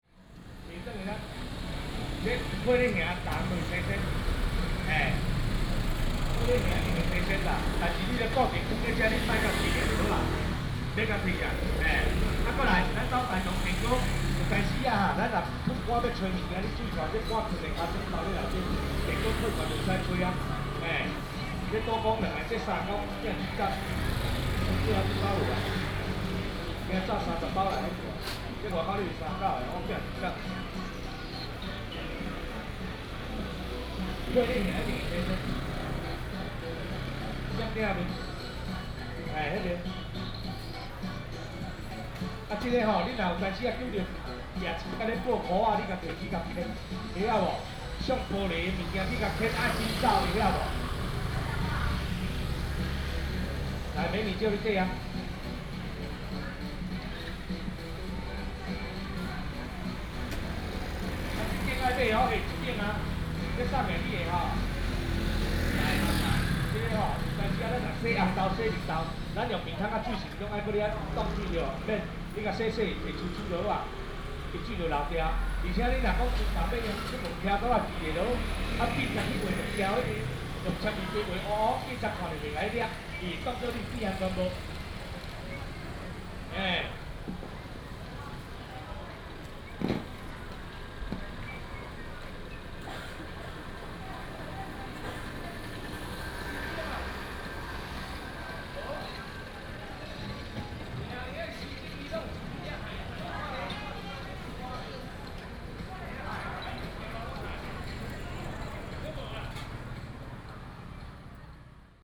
Pinghe St., Xihu Township - The sound of the vendor
in the market, The sound of the vendor, Traffic sound, sound of the birds